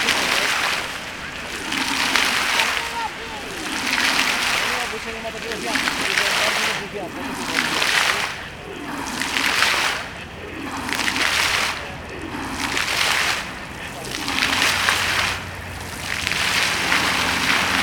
Skwer 1 Dywizji Pancernej WP, Warszawa, Pologne - Multimedialne Park Fontann (c)
Multimedialne Park Fontann (c), Warszawa